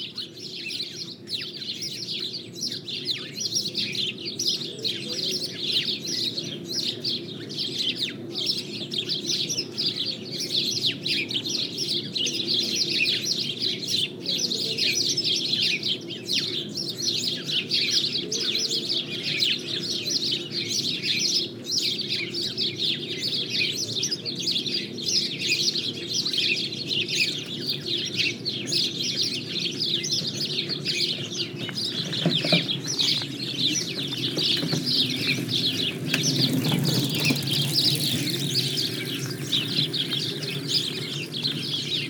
København, Denmark - Sparrows
A bunch of sparrows, discussing into a grove. Lot of bikes passing, and some pedestrians. As there's no road, the ground is gravels.
15 April 2019, 16:00